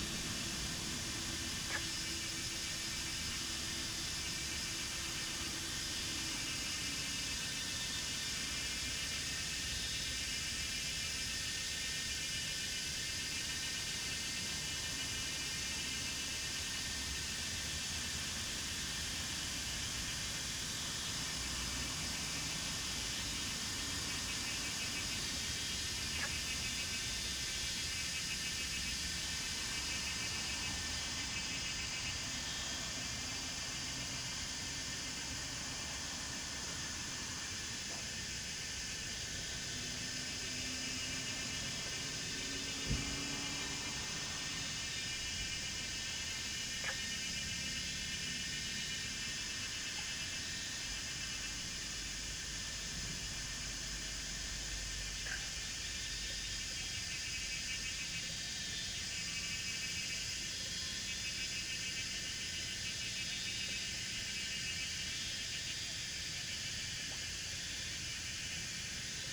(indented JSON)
{
  "title": "桃米里埔里鎮, Taiwan - Cicadas and Frogs",
  "date": "2015-06-10 18:46:00",
  "description": "Cicadas cry, Frogs chirping\nZoom H2n MS+XY",
  "latitude": "23.94",
  "longitude": "120.93",
  "altitude": "471",
  "timezone": "Asia/Taipei"
}